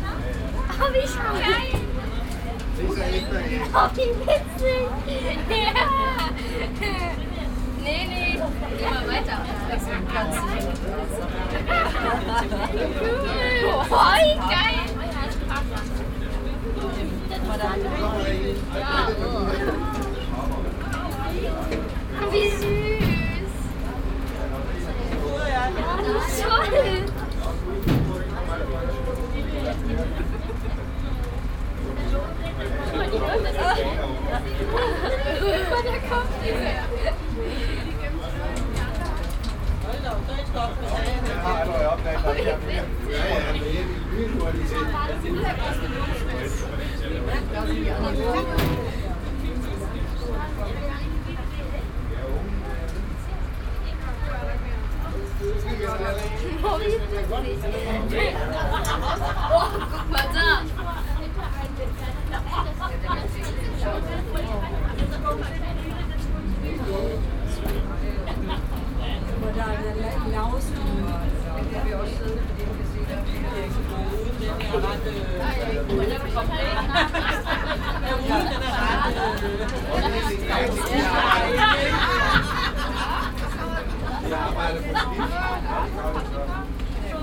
inside a caged bus that is packed with international visitors that drives thru an ape territory.
international sound scapes - social ambiences and topographic field recordings